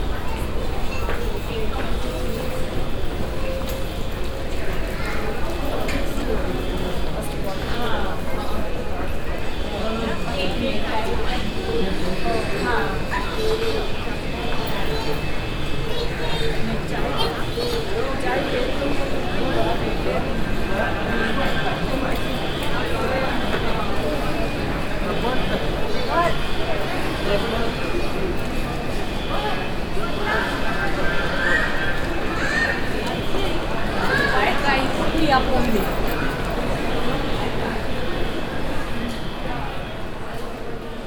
dubai, airport, transportation belt
gliding on a people transportation belt at dubai airport inside a reflective hall among hundres of travellers coming from all kind of countries
international soundmap - social ambiences and topographic field recordings
14 February 2011, 11:48